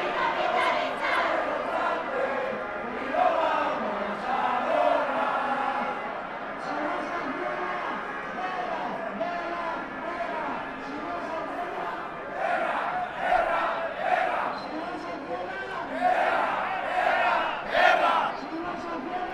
{"title": "Sant Francesc, Valencia, Valencia, España - 1 de Mayo", "date": "2015-05-01 13:18:00", "description": "1 de Mayo", "latitude": "39.47", "longitude": "-0.37", "altitude": "18", "timezone": "Europe/Madrid"}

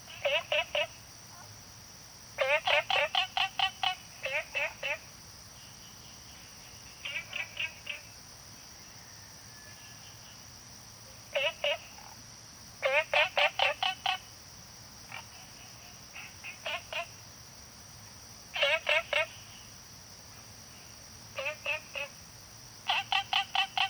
{"title": "Taomi Ln., Puli Township - Frogs chirping", "date": "2015-09-17 05:24:00", "description": "Frogs chirping, Ecological pool\nZoom H2n MS+XY", "latitude": "23.94", "longitude": "120.94", "altitude": "463", "timezone": "Asia/Taipei"}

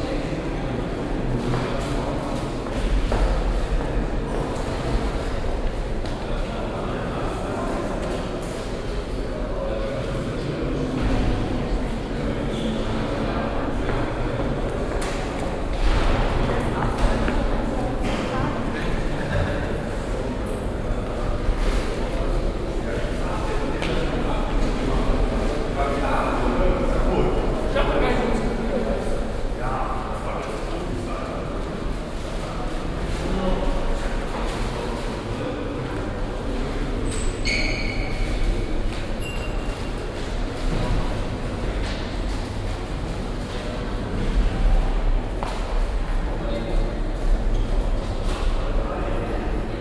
{"title": "cologne, claudiusstr, fachhochschule", "date": "2008-05-29 22:29:00", "description": "soundmap: köln/ nrw\natmo, stimmen, schritte, türen fachhochschule claudiusstr, kurz aussen dann innen, morgens\nproject: social ambiences/ listen to the people - in & outdoor nearfield recordings", "latitude": "50.92", "longitude": "6.97", "altitude": "54", "timezone": "Europe/Berlin"}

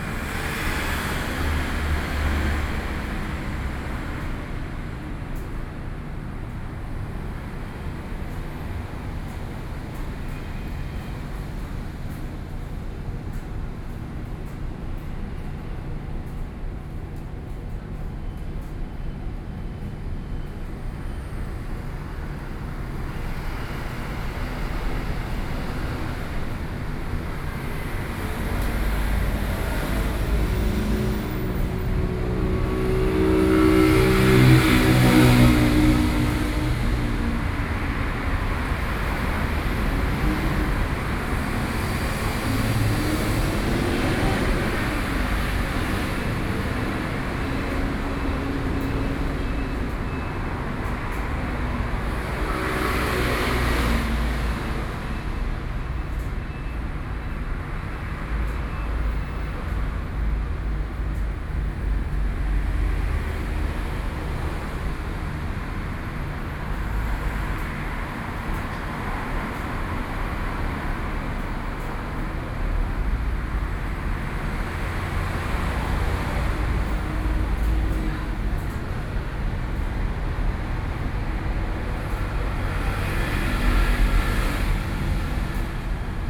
{"title": "Daye Rd., Beitou Dist., Taipei City - Traffic noise", "date": "2013-04-15 16:31:00", "description": "Traffic noise, In front of the Laundromat, Sony PCM D50 + Soundman OKM II", "latitude": "25.14", "longitude": "121.50", "altitude": "11", "timezone": "Asia/Taipei"}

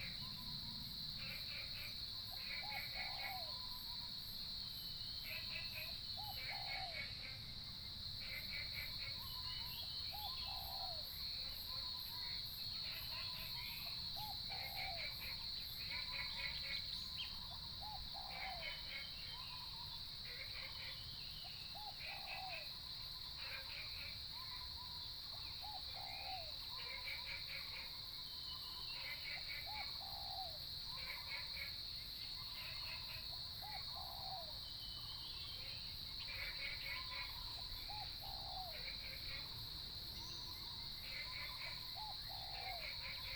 {"title": "種瓜路6號, Puli Township - Early morning", "date": "2015-06-10 05:41:00", "description": "Bird calls, Frogs sound, Early morning, Cicadas cry, Distance aircraft flying through", "latitude": "23.94", "longitude": "120.92", "altitude": "503", "timezone": "Asia/Taipei"}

